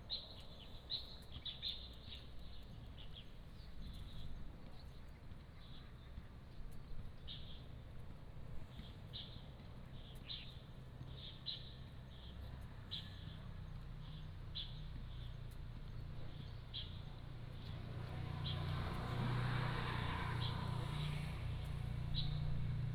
中正公園, Beigan Township - in the Park
in the Park, Birdsong In the distance the sound of playing basketball
馬祖列島 (Lienchiang), 福建省 (Fujian), Mainland - Taiwan Border, 13 October 2014, 17:23